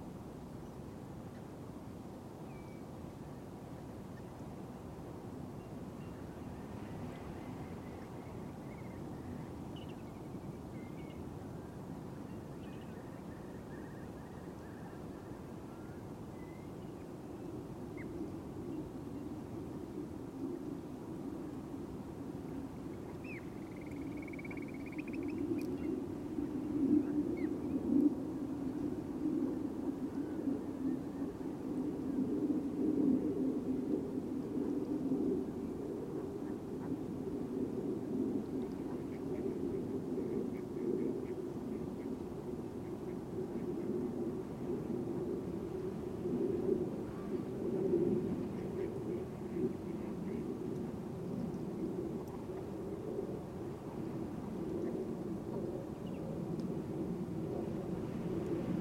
Norfolk Coast Path, Kings Lynn, UK - Harbour Recordings, Brancaster Staithe
This recording was made in Brancaster Staithe, Norfolk, UK. It is a small town nearby to Kings Lynn, the conditions were very windy, but there were wading birds and geese etc in the area. In this recording you can hear a seagull picking up and dropping a mussel from height, to try and crack open its shell. Recorded on Mixpre6/USI Pro in a rycote windshield. Apologies for the small amount of wind-noise in the recording, I had full windshield and dead cat covering on the microphones.
There is also the distance sound of ship masts rattling in the wind.